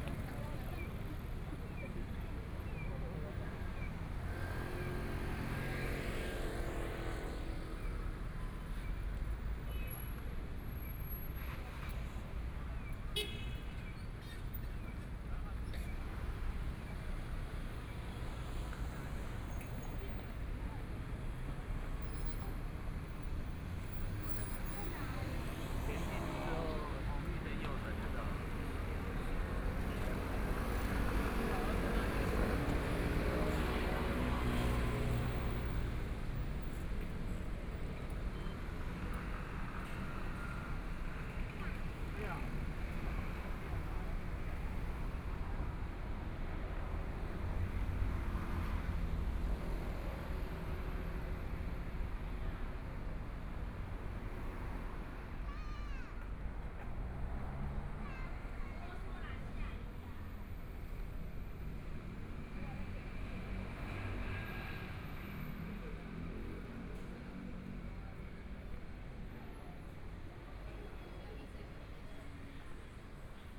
Shuangcheng St., Taipei City - Walking across the different streets
Walking across the different streets, Environmental sounds, Motorcycle sound, Traffic Sound, Binaural recordings, Zoom H4n+ Soundman OKM II
Zhongshan District, Taipei City, Taiwan, 6 February 2014, 18:28